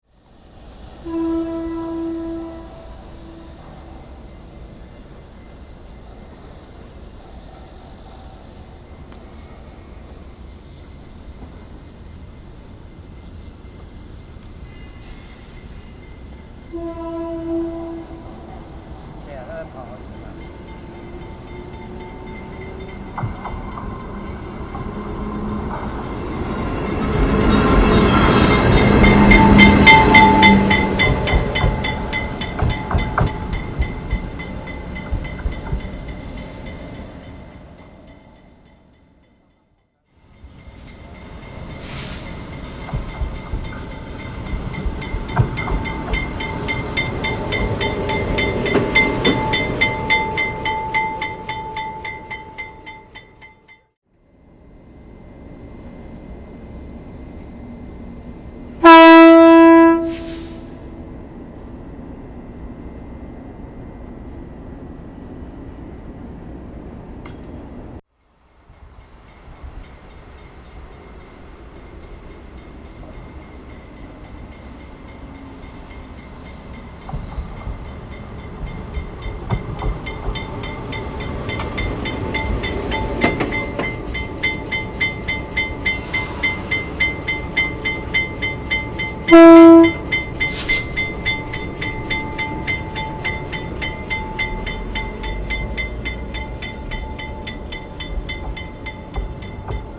富岡機廠, Yangmei City - Train being tested
Train traveling back and forth to test
Zoom H6 MS +Rode NT4 ( Railway Factory 20140806-11)